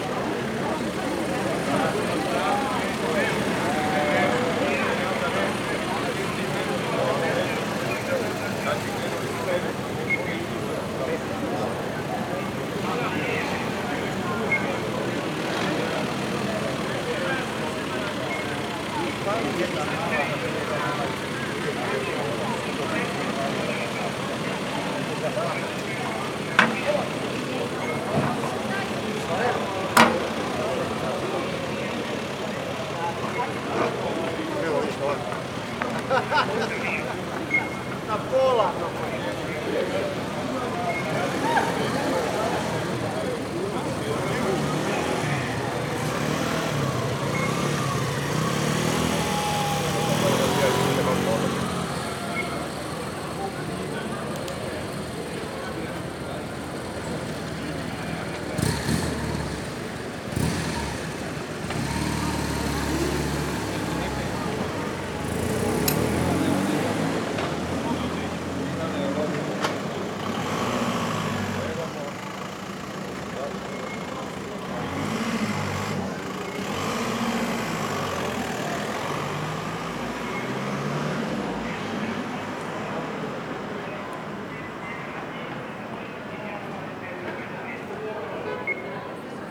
Zagreb, police leaving after the demonstrations - Center of town, near Varsavska
police vehicles leaving the demonstrations site (but still blocking access to the pedestrian zone in destruction)
City of Zagreb, Croatia